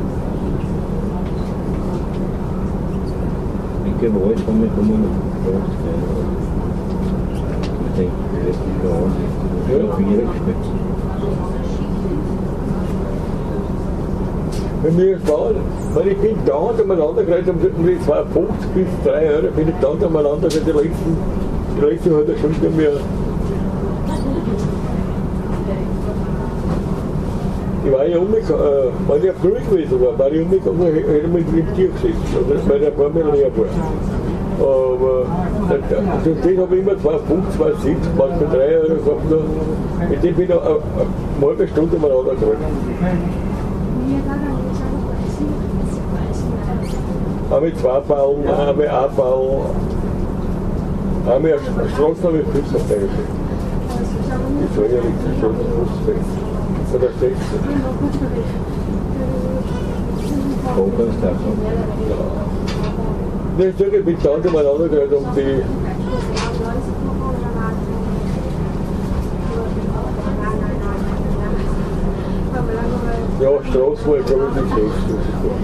train delayed at the train station of goetzendorf, local passengers talking